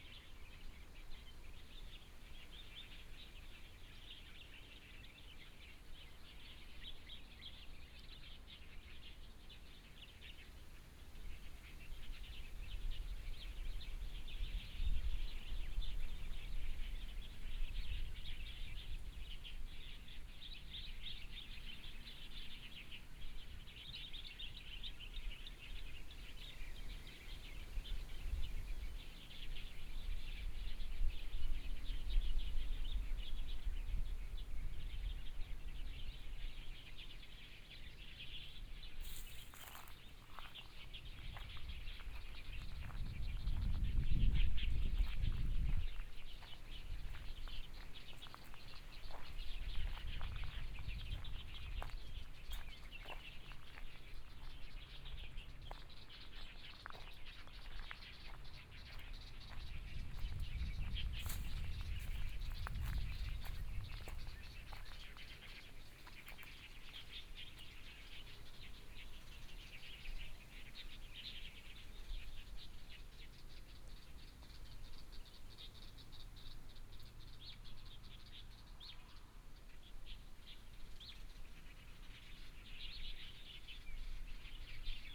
Zhiben, Taitung City - Birds singing
Traffic Sound, Birds singing, Sparrow, Binaural recordings, Zoom H4n+ Soundman OKM II ( SoundMap20140117- 5)
Taitung County, Taiwan, 17 January 2014